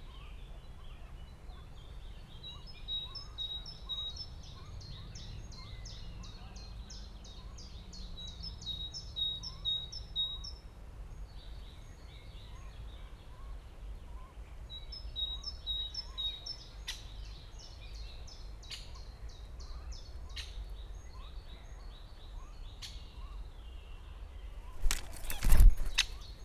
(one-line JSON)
{"title": "Königsheide, Berlin - forest ambience /w woodpecker", "date": "2020-05-17 13:55:00", "description": "I wanted to record the frogs, but suddenly a great spotted woodpecker (Dendrocopos major, Buntspecht) arrived and started working on the wooden pole where the left microphone was attached too. So it goes.\n(Sony PCM D50, DPA 4060)", "latitude": "52.45", "longitude": "13.49", "altitude": "35", "timezone": "Europe/Berlin"}